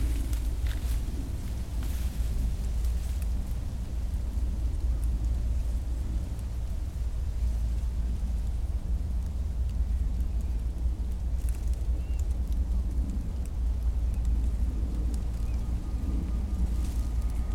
Temse, Belgium - Op Adem
A soundwalk by Jelle Van Nuffel from downtown Temse to Wildfordkaai Temse (Belgium)